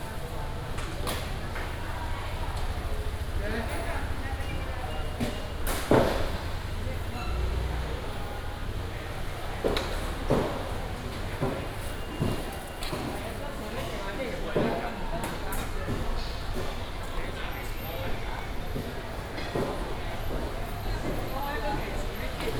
學甲公有市場, Xuejia Dist., Tainan City - Walking in the public market
Walking in the public market, Traditional market block, Traffic sound